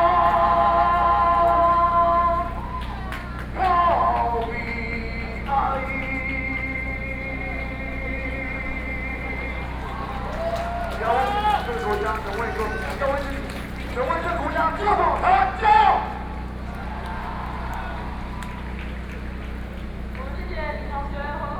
Walking through the site in protest, People and students occupied the Legislature
Binaural recordings